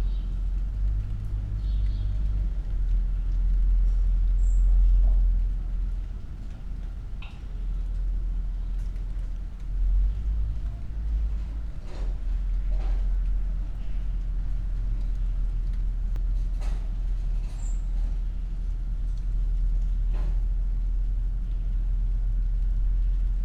deep resonance by a car, wind, dry leaves, drops, crows